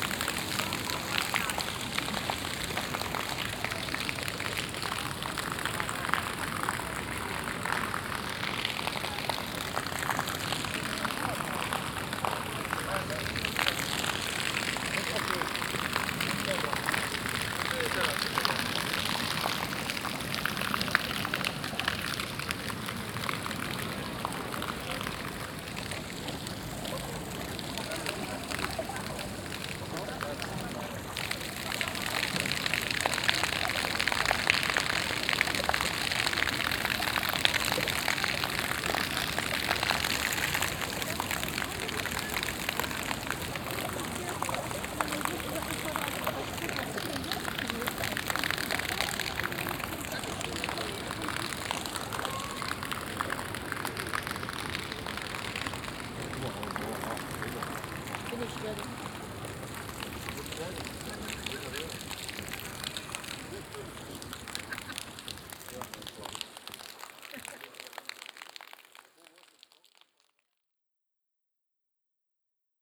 Stadtkern, Essen, Deutschland - essen, kettwigerstr, water play fountain
At the city pedestrian area. The sound of a playful water fountain, that can be activated by the feet.
In der City Fussgängerzone. Der Klang eines Wasserspiels, das mit den Füßen aktiviert werden kann. Im Hintergrund Passanten und Verkehr von einer kleinen Nebenstraße.
Projekt - Stadtklang//: Hörorte - topographic field recordings and social ambiences
Essen, Germany